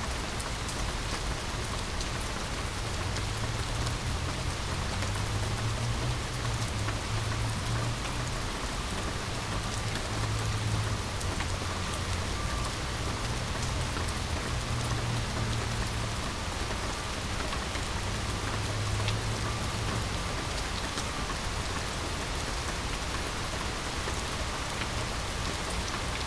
Paulding Ave, Northvale, NJ, USA - Late Morning Rainstorm
A much-needed rainstorm, as captured from a covered bench right outside the front door. There was a severe drought at the time of this recording, with hot summer weather and almost no rain throughout the duration of my approximately 15-day stay.
[Tascam Dr-100mkiii uni mics]